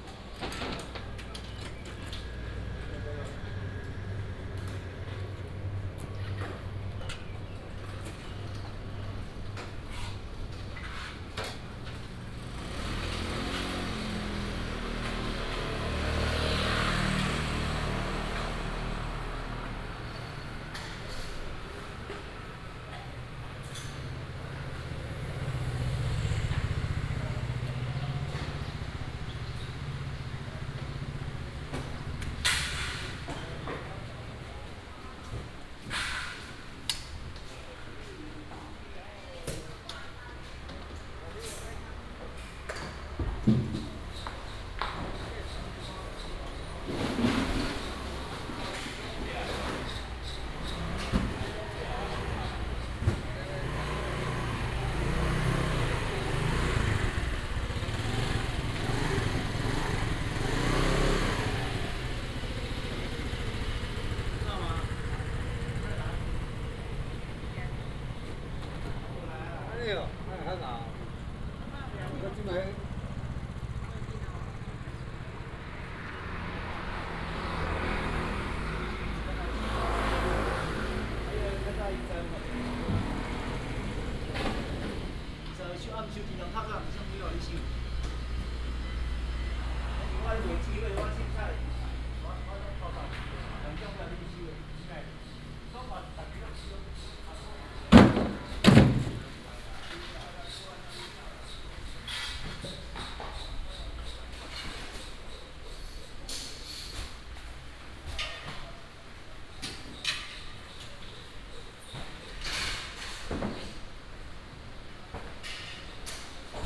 Futai St., Taishan Dist., New Taipei City - walking in the Street
bird sound, Traffic sound, Preparing for market operation, Traditional market